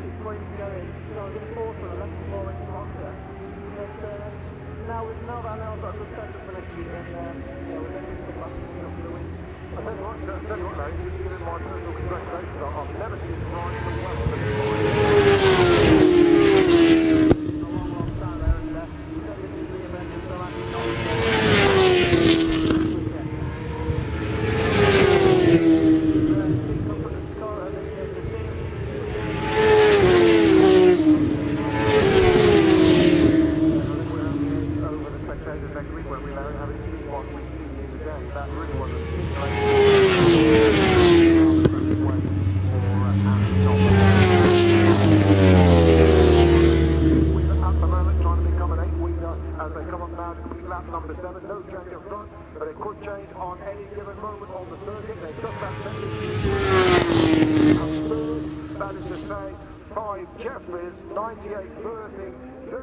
Silverstone Circuit, Towcester, United Kingdom - world endurance championship 2002 ... superstock ...
fim world enduance championship 2002 ... superstock support race ... one point stereo mic to minidisk ...
19 May 2002